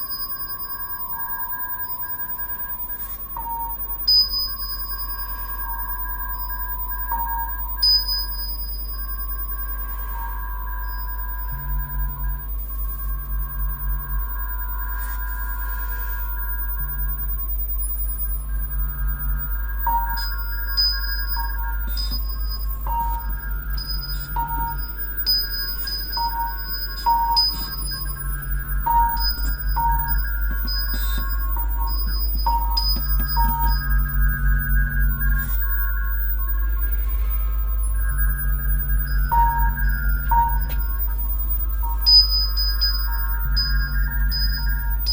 lippstadt, light promenade, installation the mediator
the installation is part of the project light promenade lippstadt curated by dirk raulf
further informations can be found at:
sound installations in public spaces
17 October 2009, 14:18